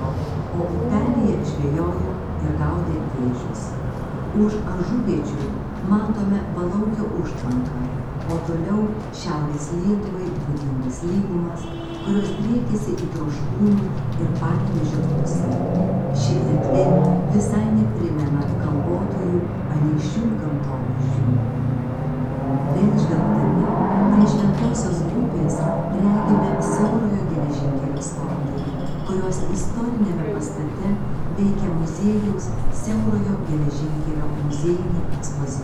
Anykščiai, Lithuania, in church tower
a viewpoint (33 meters in height) on one of the two St. Apostle Matthew church tower